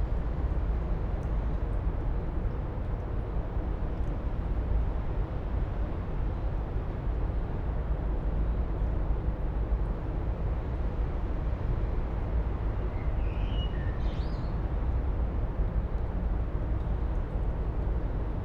Rue Marie Curie, Esch-sur-Alzette, Luxemburg - river Alzette tube drone
River Alzette flows in a tube under most parts ot town, since the 1910s. At this point it comes to view, in a concrete canal. Water flow is mostly inaudible, but an immense drone streams out of that canal, maybe traffic and industrial noise from the other side of the city.
(Sony PCM D50, Primo EM272)
Canton Esch-sur-Alzette, Lëtzebuerg, 11 May, 18:10